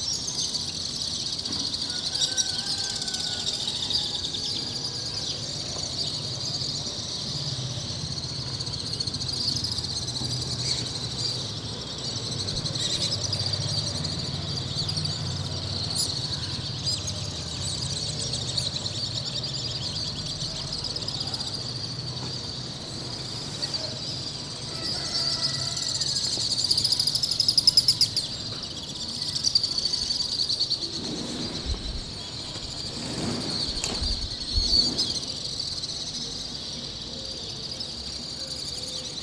Hundreds of swallows nest in the ancient walls of the Medina
They wake you up at dawn
Recorded on a rooftop, with a Zoom H2
Fes, Morocco